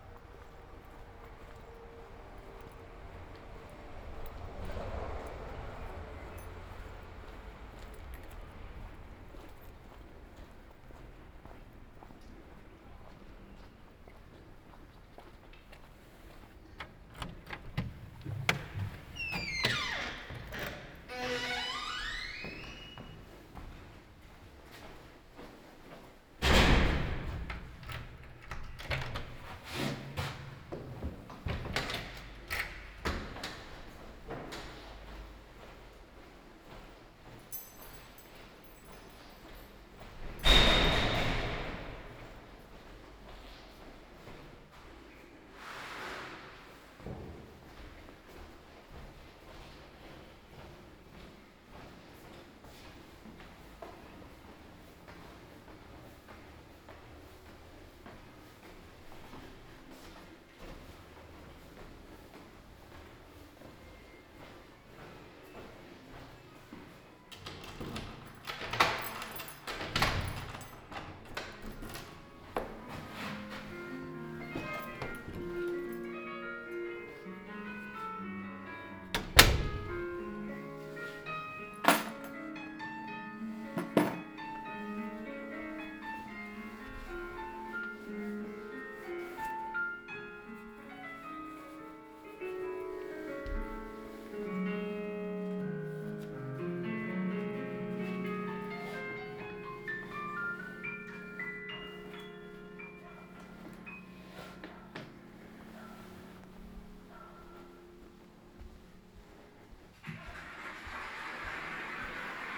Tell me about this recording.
"Round Noon bells on Sunday April 26 in the time of COVID19" Soundwalk, Chapter LVII of Ascolto il tuo cuore, città. I listen to your heart, city, Sunday April 26th 2020. San Salvario district Turin, walking to Corso Vittorio Emanuele II and back, forty seven days after emergency disposition due to the epidemic of COVID19. Start at 11:55 a.m. end at 00:18 p.m. duration of recording 22'30'', The entire path is associated with a synchronized GPS track recorded in the (kmz, kml, gpx) files downloadable here: